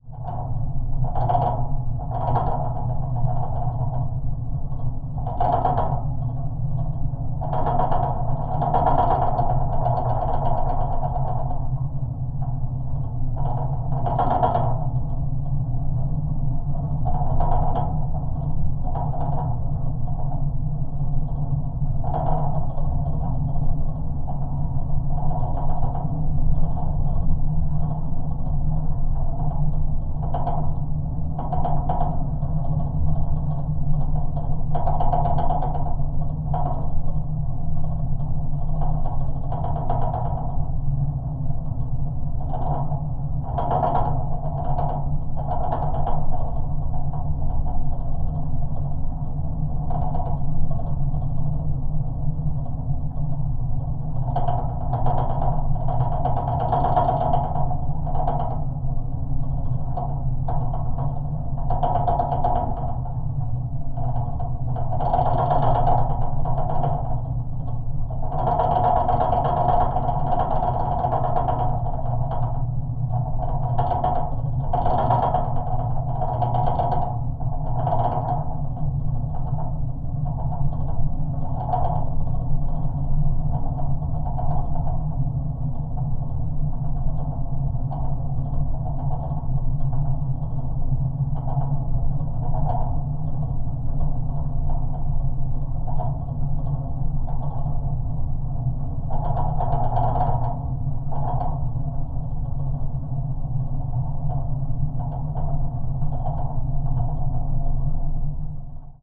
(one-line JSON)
{"title": "South Pierhead, South Haven, Michigan, USA - South Haven Lighthouse Catwalk Geophone", "date": "2022-07-20 08:51:00", "description": "Geophone recording from leg of metal support for lighthouse catwalk. Very windy morning.", "latitude": "42.40", "longitude": "-86.29", "altitude": "175", "timezone": "America/Detroit"}